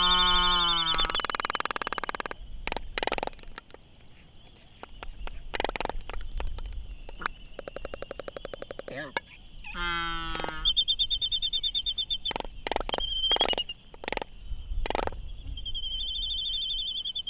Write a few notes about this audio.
Laysan albatross dancing ... Sand Island ... Midway Atoll ... open lavaliers on mini tripod ... back ground noise and windblast ...